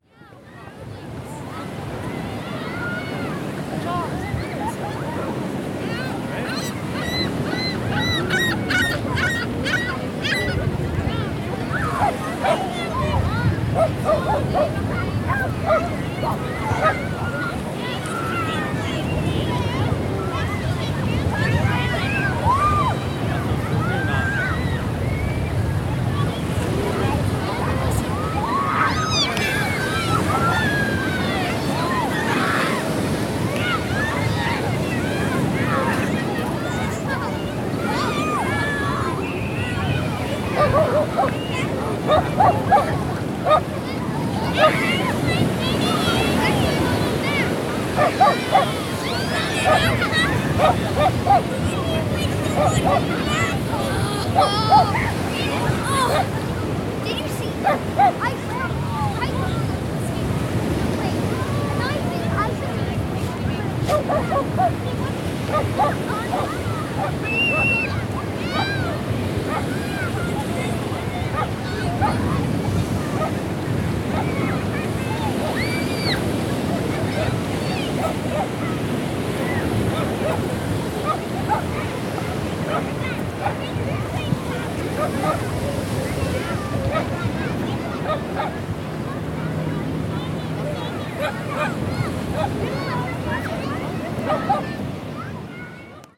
Boardwalk, Toronto, ON, Canada - WLD 2018: Woodbine Beach
Sunbathers, waves, etc, at Woodbine Beach, east Toronto.
18 July 2018, 15:07